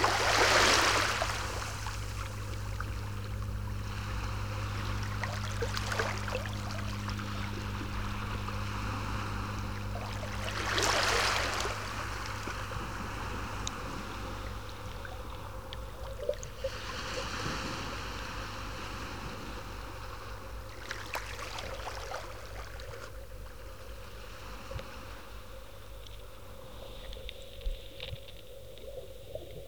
Baltic Sea, Nordstrand Dranske, Rügen - Stereoscopic Aircraft
Propellerplane above the se, along the coast, recorded with SASS and 1 JrF Hydrophone
Mecklenburg-Vorpommern, Deutschland